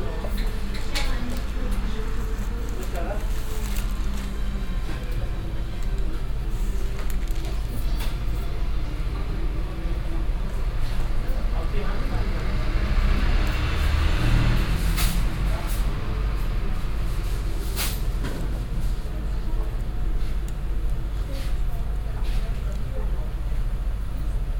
cologne, nord, neusserstrasse, türkischer imbiss
bestellungen, kassenpiepsen, der durch die offene tür eindringende strasselärm, tütenrascheln, türkische hintergrundsmusik
soundmap nrw:
projekt :resonanzen - social ambiences/ listen to the people - in & outdoor nearfield recordings
20 September, ~12:00